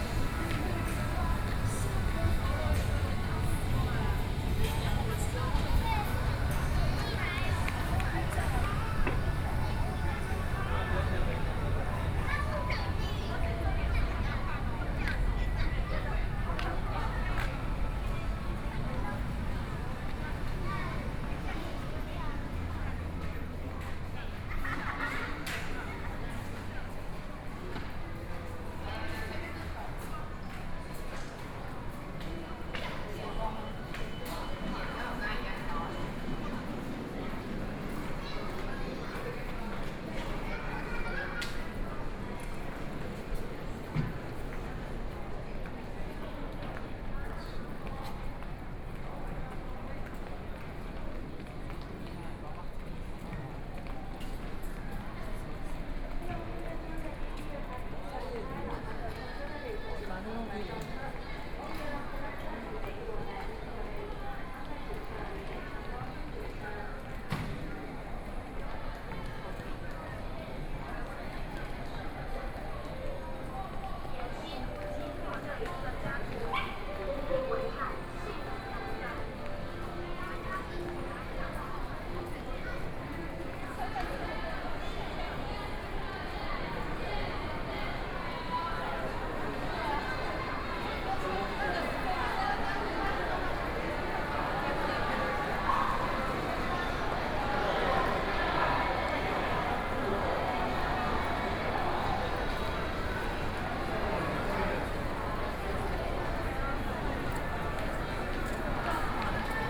Banqiao Station, Banqiao District - Soundwalk
From the square through the underground shopping street and the station hall, Went to MRT station, Binaural recordings, Sony PCM D50+ Soundman OKM II
Banqiao District, New Taipei City, Taiwan, 12 October 2013, 15:25